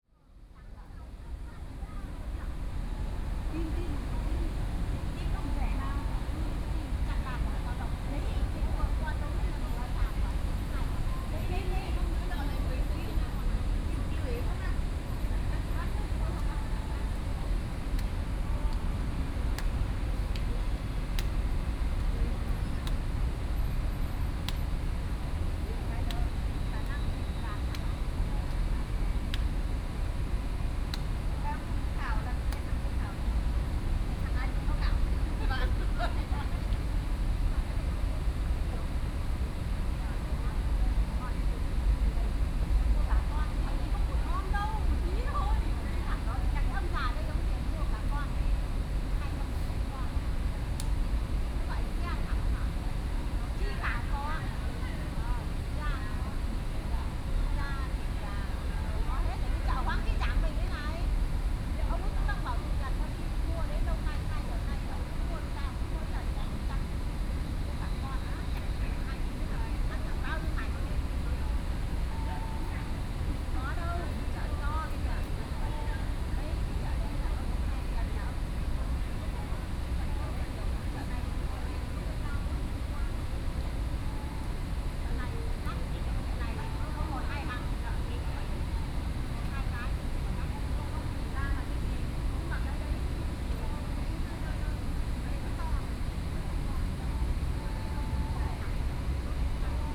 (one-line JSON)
{"title": "Taipei Botanical Garden - Chat", "date": "2013-09-13 17:19:00", "description": "Group chat between foreign caregivers, Sony Pcm D50+ Soundman OKM II", "latitude": "25.03", "longitude": "121.51", "altitude": "17", "timezone": "Asia/Taipei"}